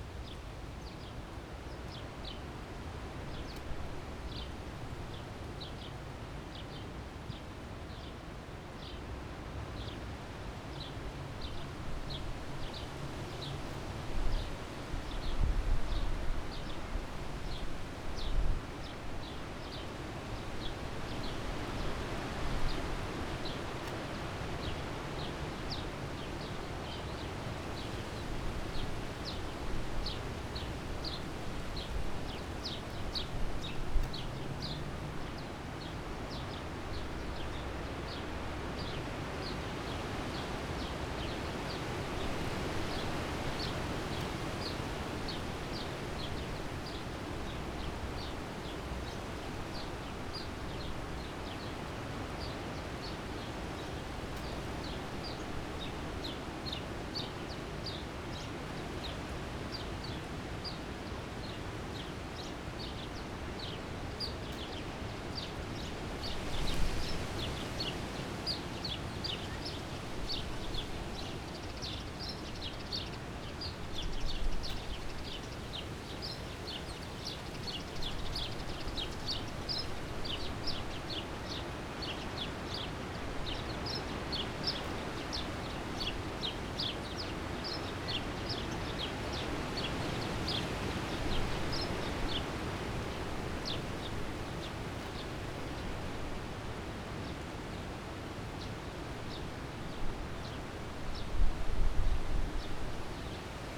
Tempelhofer Feld, Berlin, Deutschland - wind in poplar trees
place revisited, nice wind in the poplars
(SD702, S502ORTF)